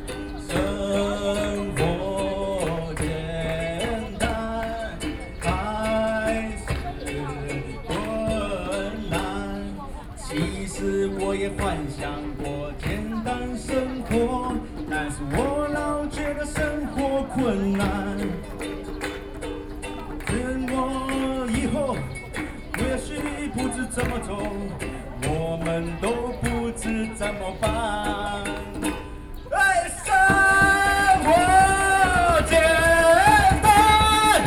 Chiang Kai-shek Memorial Hall, Taipei - Band performances
against nuclear power, Band performances, Sony PCM D50 + Soundman OKM II
台北市 (Taipei City), 中華民國, 14 June 2013